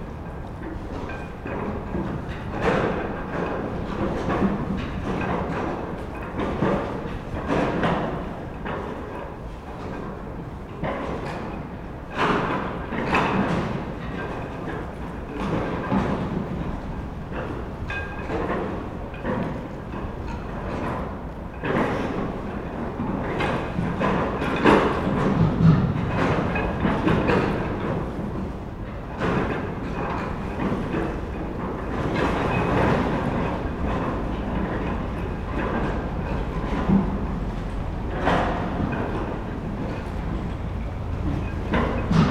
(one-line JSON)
{"title": "Sèvres, France - Barge pontoon", "date": "2016-09-22 15:30:00", "description": "A pontoon makes big noises with the waves on the Seine river.", "latitude": "48.83", "longitude": "2.23", "altitude": "27", "timezone": "Europe/Paris"}